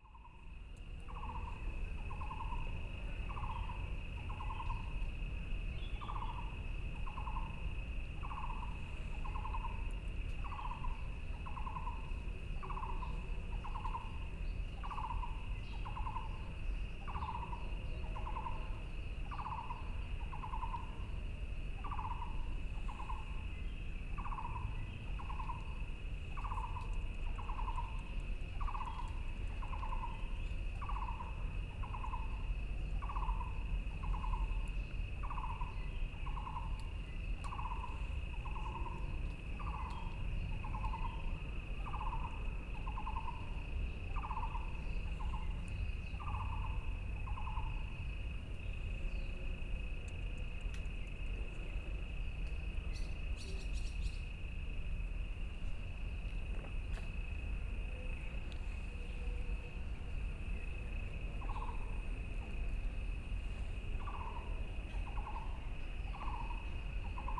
BiHu Park, Taipei City - in the Park
Frogs sound, Insects sound, Birdsong, Traffic Sound
Taipei City, Taiwan, 4 May 2014